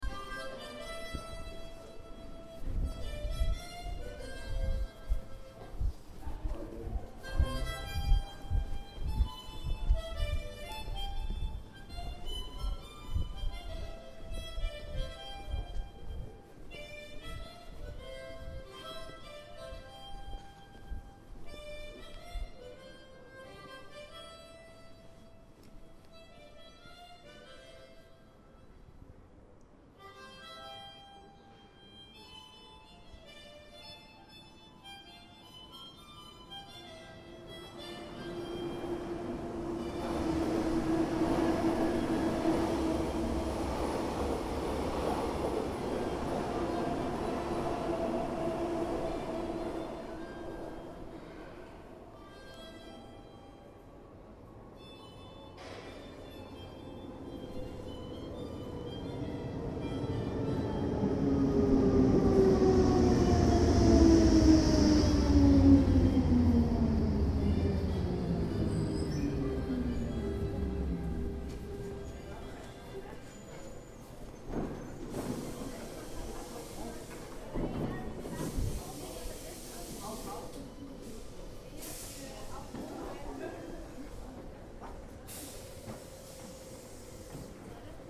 Harmonica player in a pedestrian passage underground at trainstation.
Lenzburg, Switzerland